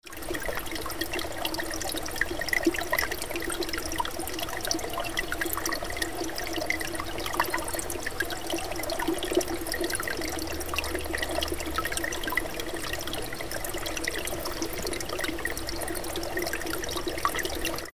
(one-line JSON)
{"title": "Mlin Ruhr, Rijeka, Rjecina river", "date": "2010-06-03 14:38:00", "description": "Rijecina river in a Summer time. Location: ex industrial mill complex Ruhr (19. century.)", "latitude": "45.35", "longitude": "14.46", "altitude": "119", "timezone": "Europe/Zagreb"}